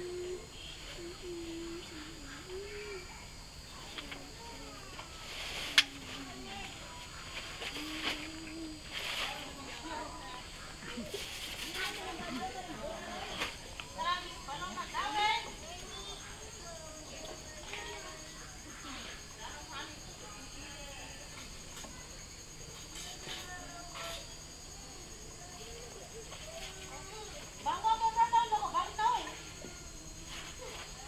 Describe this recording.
kids playing near Tsingy de Bemaraha Strict Nature Reserve